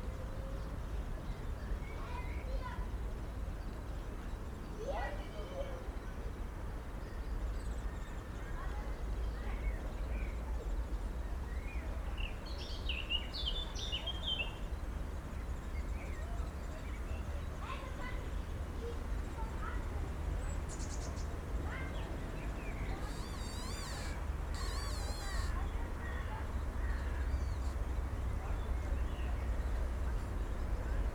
Wiesenpark, Marzahn, Berlin - sqeaking tree, young birds
kids are playing behind the bushes, a squeaking tree, young birds in a nest. the sources of these sounds are invisible to me.
(SD702, DPA4060)